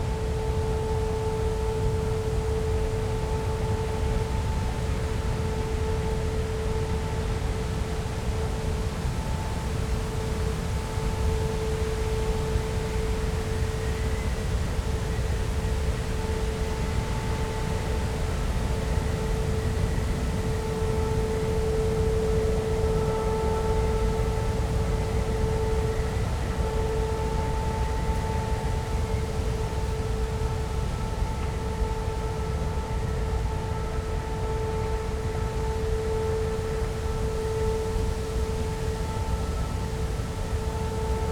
soundscape near Garzweiler brown coal mining, wind in trees
(SD702, DPA4060)